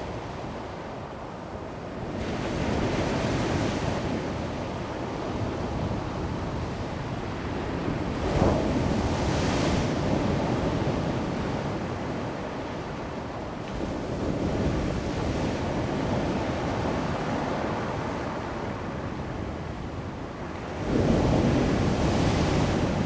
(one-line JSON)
{"title": "金崙海灘, Jinlun, Taimali Township - Sound of the waves", "date": "2018-03-15 16:55:00", "description": "Sound of the waves, at the beach, The sound of a distant train\nZoom H2n MS+XY", "latitude": "22.53", "longitude": "120.97", "timezone": "Asia/Taipei"}